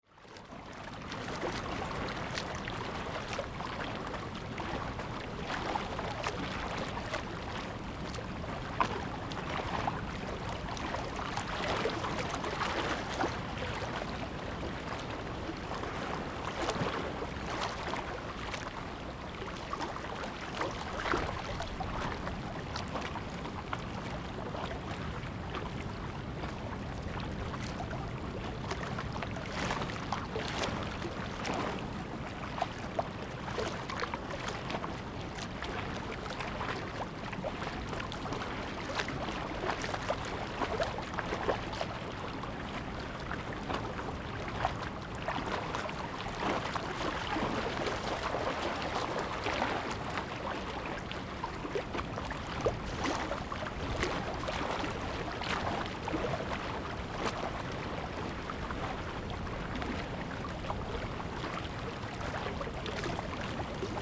Sound tide, Small pier, sound of the waves
Zoom H2n MS+XY
Fengbin Township, Hualien County, Taiwan, 9 October